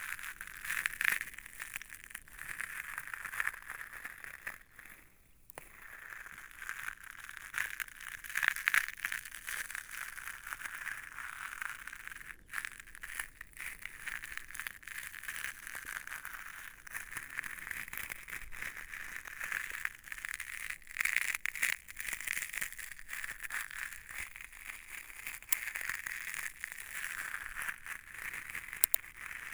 Mas-d'Orcières, France - Granite gravels
The Lozere mounts. This desertic area is made of granite stones. It's completely different from surroundings. Here, I'm playing with the gravels. It screechs a lot and you won't find this kind of sounds in the other Cevennes mountains.
28 April 2016